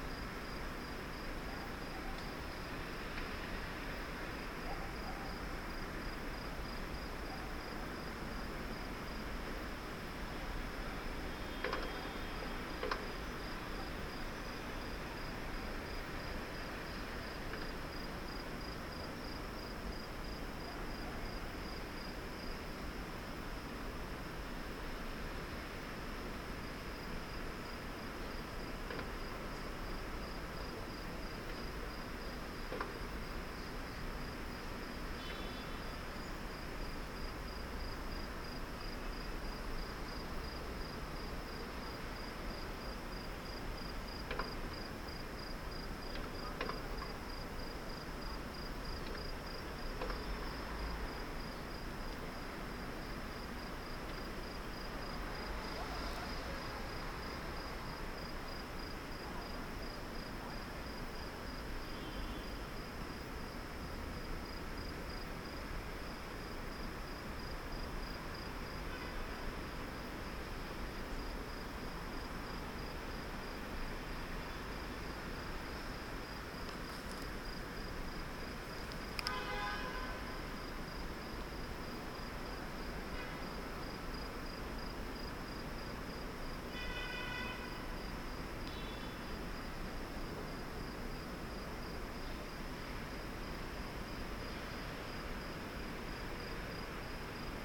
Menashe Ben Israel St, Jerusalem, Israel - Old Graveyard in Jerusalem
Old Graveyard in Jerusalem, Evening time